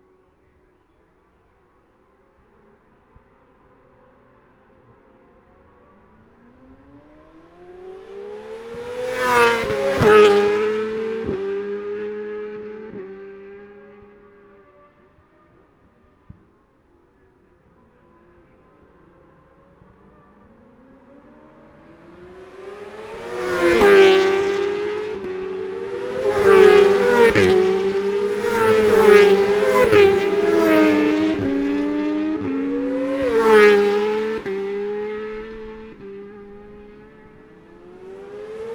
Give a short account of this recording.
Gold Cup 2020 ... 600 odd and 600 evens pratices ... Memorial Out ... Olympus LS14 integral mics ... real time as such so gaps prior and during the events ...